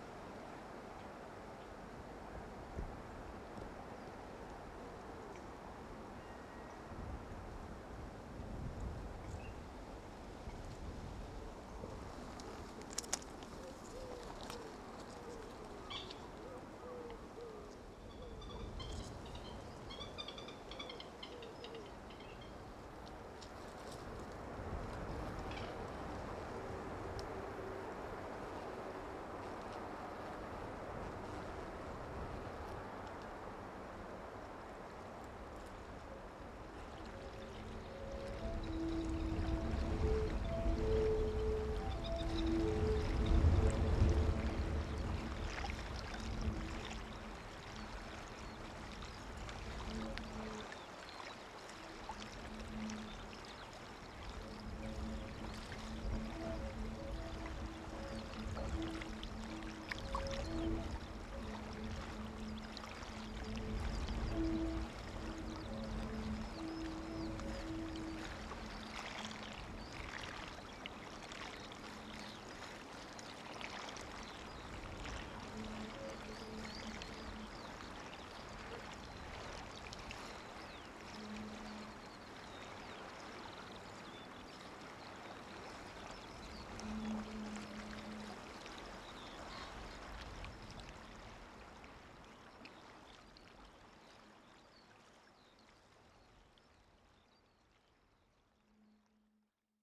Sound mirrors at Dungeness - flooded quarry / waterfowl preserve - Denge sound mirrors - edit - 02apr2009
Whistling sound generated by holes in metal gate / swing bridge installed by English Heritage to protect the site from vandals.
New Romney, Kent, UK